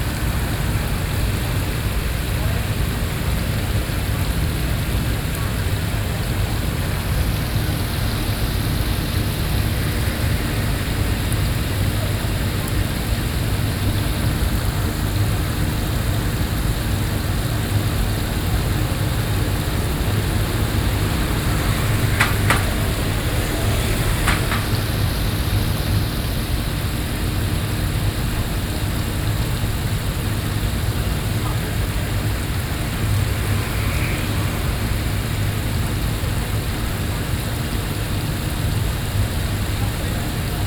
Lane, Mínshēng Rd, Danshui District - Air-conditioned pool
New Taipei City, Taiwan, 8 November 2012, 11:31